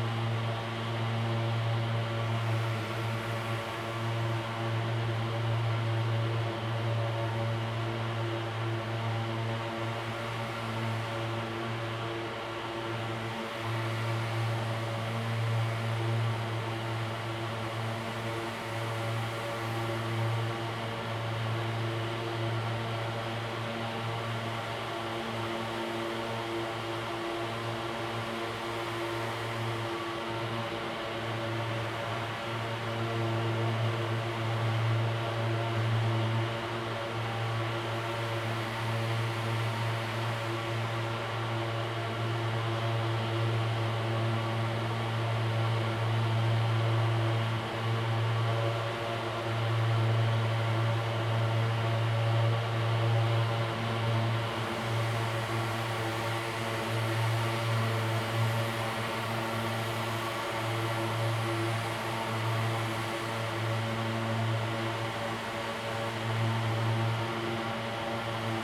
{
  "title": "Taipei Railway Workshop, Taiwan - Sprayed with disinfectant",
  "date": "2014-09-23 15:19:00",
  "description": "Sprayed with disinfectant, Disused railway factory\nZoom H2n MS + XY",
  "latitude": "25.05",
  "longitude": "121.56",
  "altitude": "10",
  "timezone": "Asia/Taipei"
}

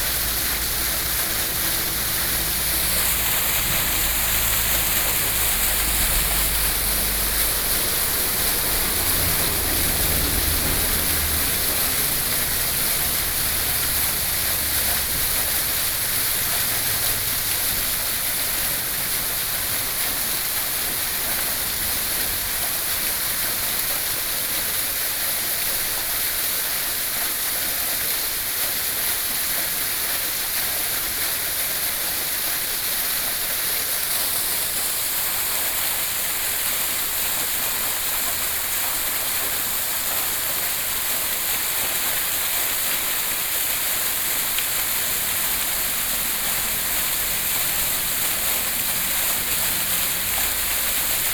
{
  "title": "台灣新北市石門區尖鹿里 - small waterfall",
  "date": "2012-07-11 06:33:00",
  "description": "Traffic Sound, In the small mountain next to the waterfall\nSony PCM D50",
  "latitude": "25.30",
  "longitude": "121.58",
  "altitude": "24",
  "timezone": "Asia/Taipei"
}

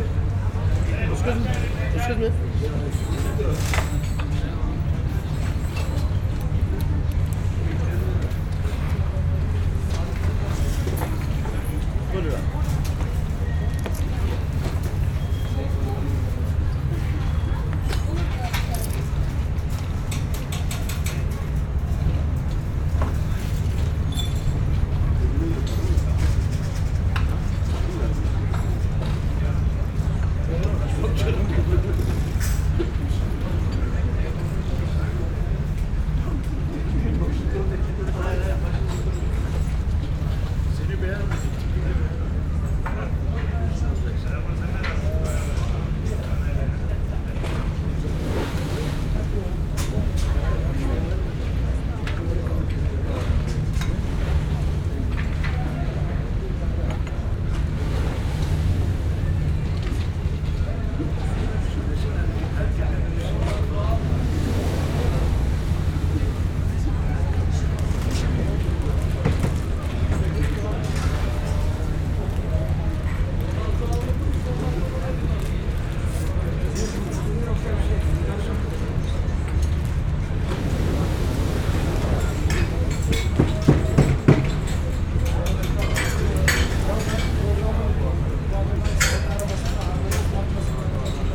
{
  "title": "cafe at Kabatas, Istanbul",
  "date": "2010-03-01 17:33:00",
  "description": "sounds of the cafe at Kabatas port, Istanbul",
  "latitude": "41.03",
  "longitude": "28.99",
  "altitude": "4",
  "timezone": "Europe/Tallinn"
}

